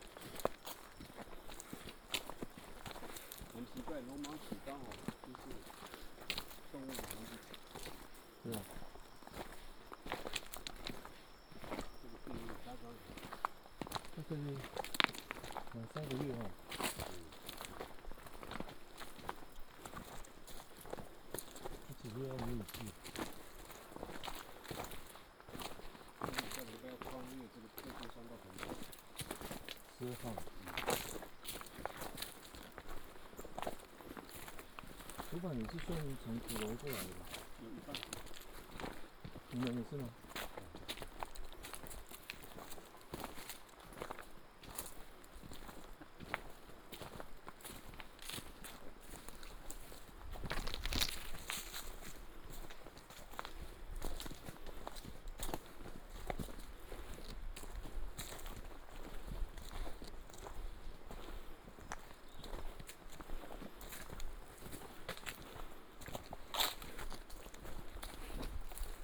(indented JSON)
{
  "title": "土板產業道路, Daren Township - mountain trail",
  "date": "2018-04-05 21:59:00",
  "description": "Follow tribal hunters walking on mountain trail, Ancient tribal mountain road, stream",
  "latitude": "22.44",
  "longitude": "120.86",
  "altitude": "236",
  "timezone": "Asia/Taipei"
}